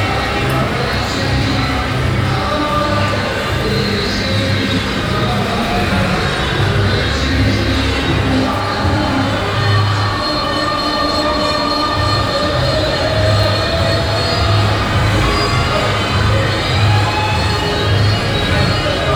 Le Passage, Tunis, Tunesien - tunis, rue lenine, arabic soundsystem
Standing on the Street in the afternoon. Listening to the sound of an arabic soundsystem coming from the first floor of a house across the small street. The sound of arabic scratched party dance music spreaded in the street.
international city scapes - topographic field recordings and social ambiences